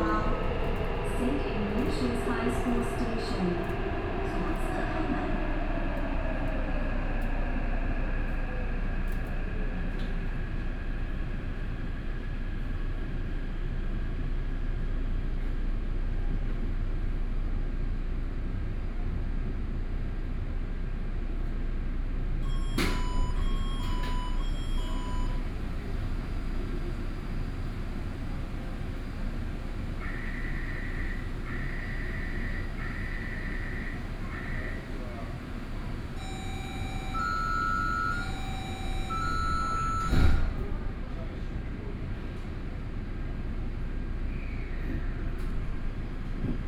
Sanchong District, New Taipei City - Luzhou Line (Taipei Metro)
from Minquan West Road Station to Sanmin Senior High School Station, Binaural recordings, Sony PCM D50 + Soundman OKM II
Sanzhong District, New Taipei City, Taiwan, 20 October